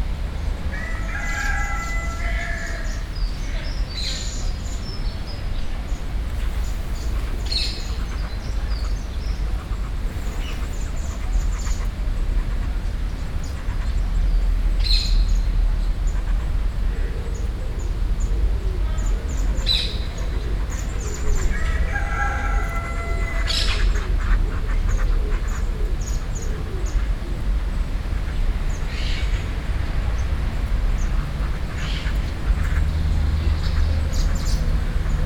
Brussels, Parc Pierre Paulus, Ducks Goose and Jar - Brussels, Parc Pierre Paulus, Ducks, Cock, general ambience
Brussels, Parc Pierre Paulus, Ducks, Cock, general ambience.